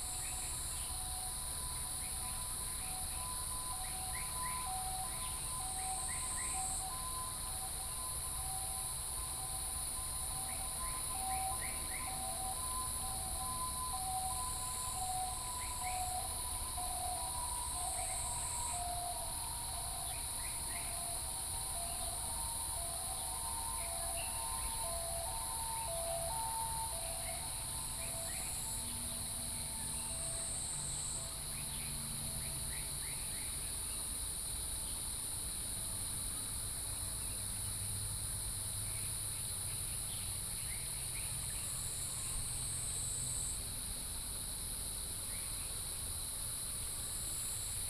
Bird calls, Traffic noise, Stream, Outside restaurant, A small village in the morning